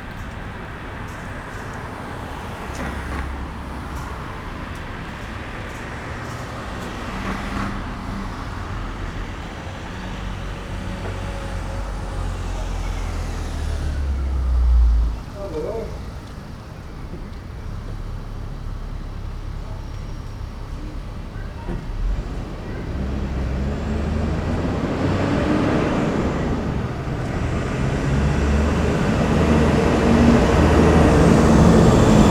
Bridlington, UK - Bridlington ... downtown ... soundscape ...
Bridlington soundscape ... traffic ... arcade ... voices ... two road sweepers push their carts by ... bird calls ... herring gull ... pied wagtail ... open lavalier mics clipped to hat ...